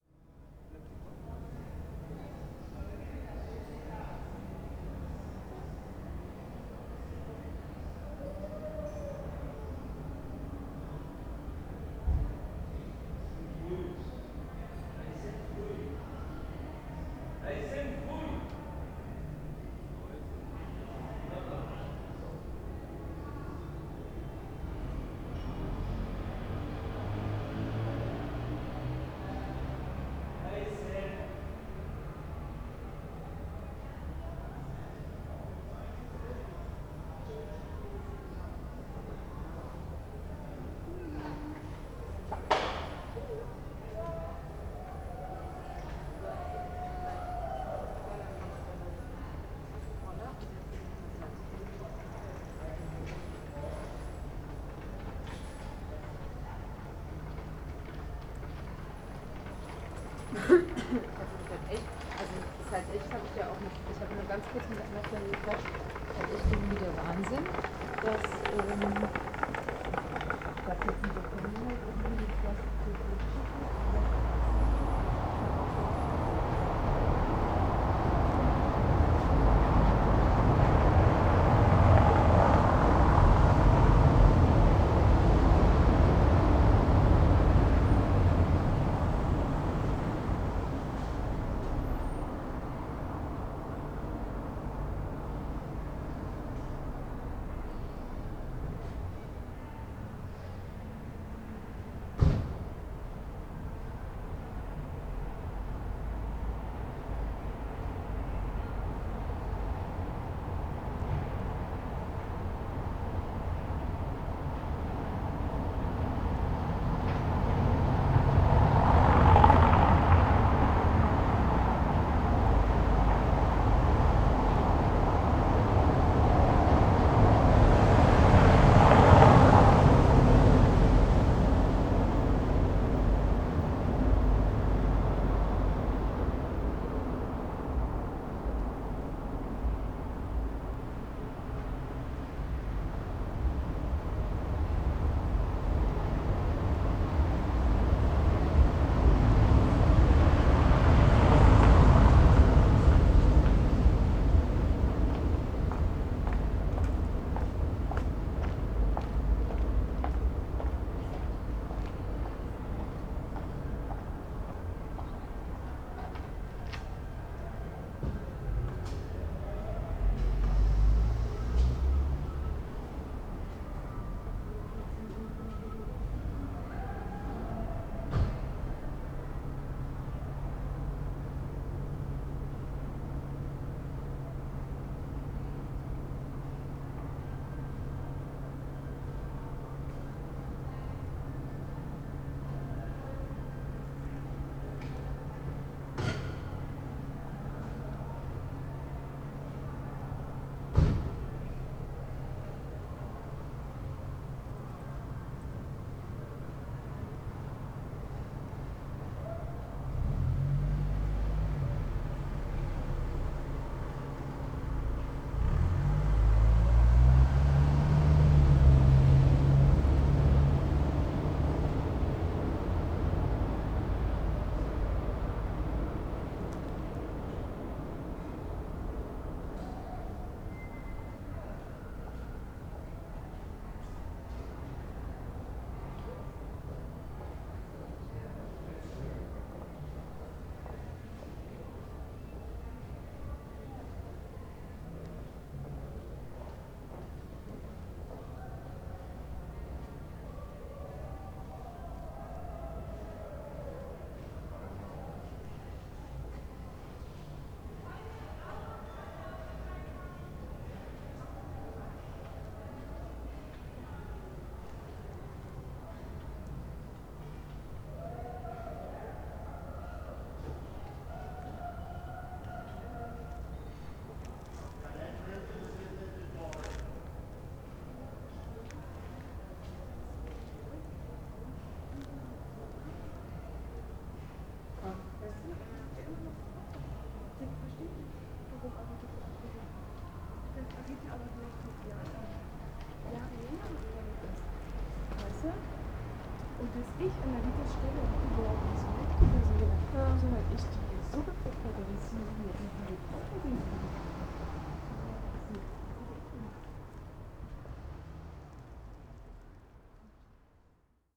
berlin: friedelstraße - the city, the country & me: late night passers by
the city, the country & me: september 10, 2011
Berlin, Germany